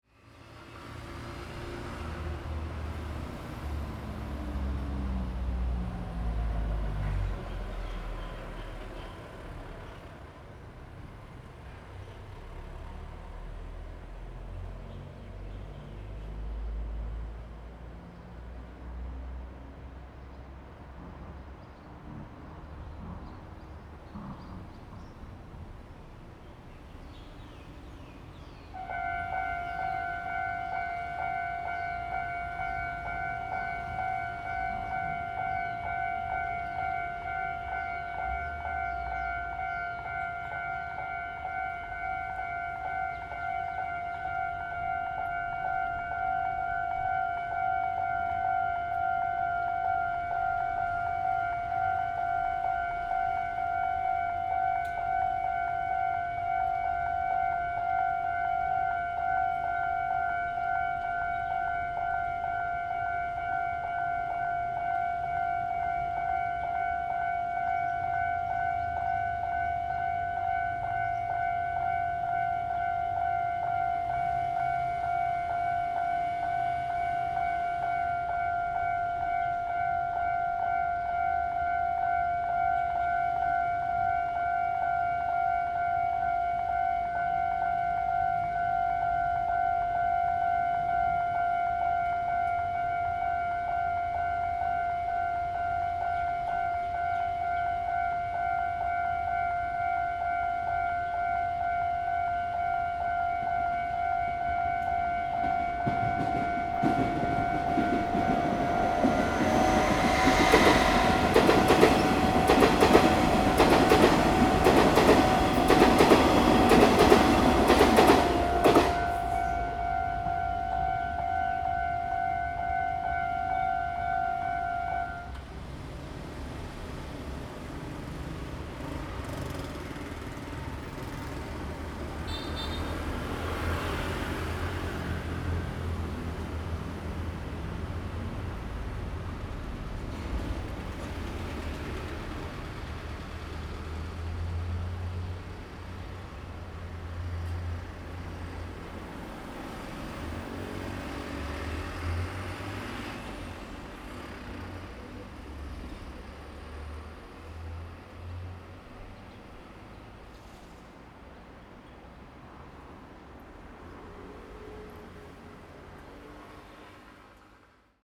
大肚合興宮, Dadu Dist., Taichung City - In front of the temple square
near the railroad crossing, Bird call, Traffic sound, The train passes by, In front of the temple square
Zoom H2n MS+ XY
Taichung City, Taiwan